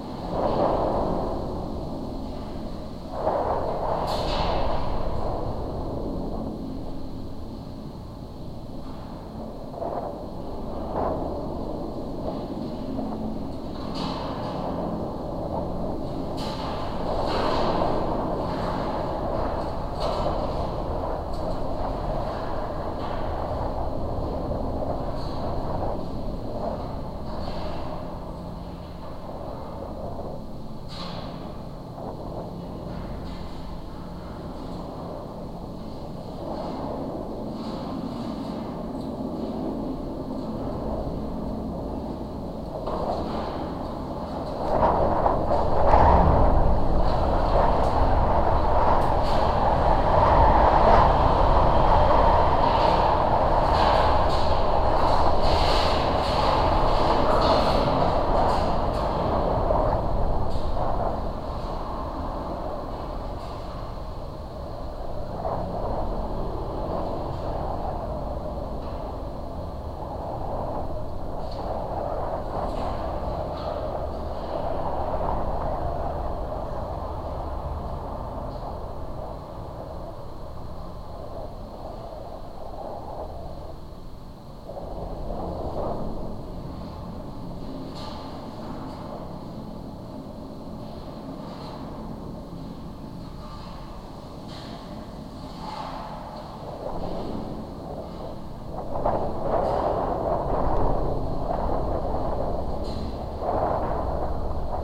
Wind in a metallic stairs structure, recorded with a contact microphone.